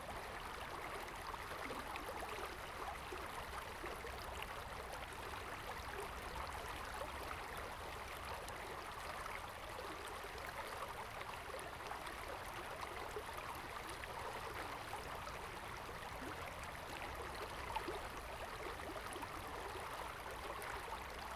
溪頭, 台東縣太麻里溪 - stream
stream, Beside the river
Zoom H2n MS+XY